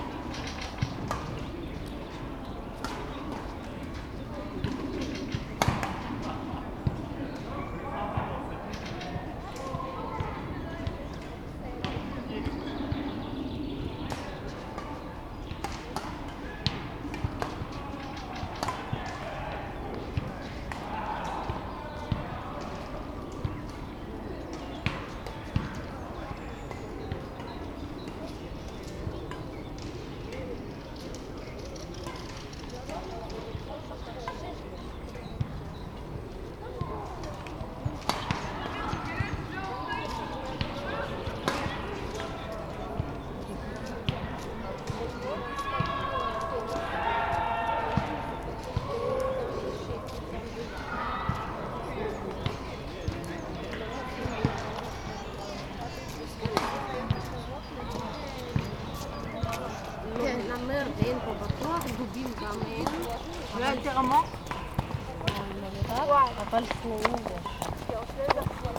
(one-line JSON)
{"title": "Shipilovskiy Proyezd, строение, Moskva, Russia - Evening in the park. 8 years later.", "date": "2019-04-30 18:30:00", "description": "Park, Volleyball, Badminton, Birds, Pedestrians.\nTascam DR-100 MkIII, int. mics.", "latitude": "55.61", "longitude": "37.69", "altitude": "178", "timezone": "Europe/Moscow"}